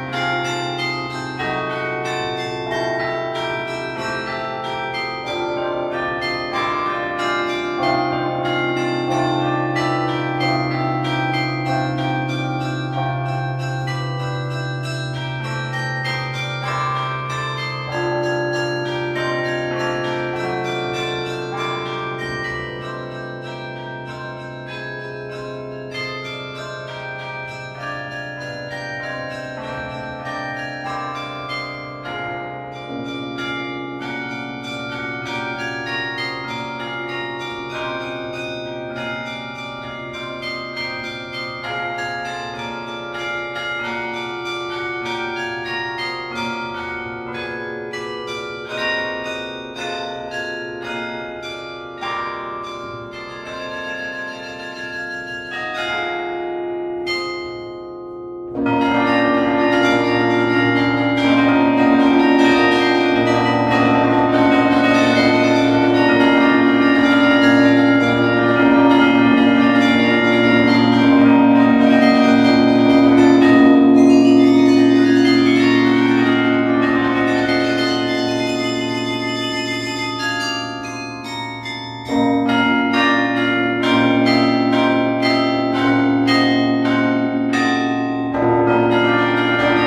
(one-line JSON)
{"title": "Bruxelles, Belgique - Brussels carillon", "date": "2011-12-24 15:10:00", "description": "Gilles Lerouge, playing at the Brussels carillon on the Christmas Day. He's a player coming from Saint-Amand les Eaux in France.\nBig thanks to Pierre Capelle and Thibaut Boudart welcoming me in the tower.", "latitude": "50.85", "longitude": "4.36", "altitude": "50", "timezone": "Europe/Brussels"}